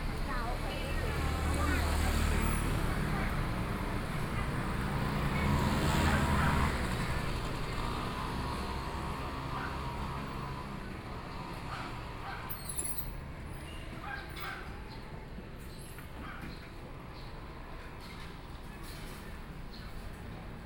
左營區城南里, Kaoshiung City - traditional market
Walking through the traditional market
Kaohsiung City, Zuoying District, 埤子頭路52號, 15 May 2014, 11:51